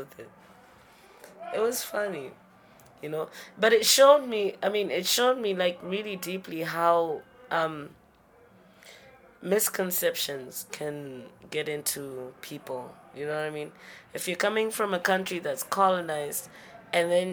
Book Cafe, Harare, Zimbabwe - Chiwoniso Maraire “She’d mbira…”
The recording was made at Book Café in the small accountant’s office at the rear on 25 August 2012. Evening’s performances had started and you can hear the sounds during our entire conversation, in fact the music begins to filters into the rhythm of the conversation. In this interview you can hear Chiwoniso “at home”, mbira-sounds in the background at the place where she loves to hang out and perform…. Here she relates her “spiritual connection” to the mbira…
Chiwoniso Maraire was an accomplished Zimbabwe singer, songwriter and mbira artist from a family of musicians and music-scholars; she died 24 July 2013.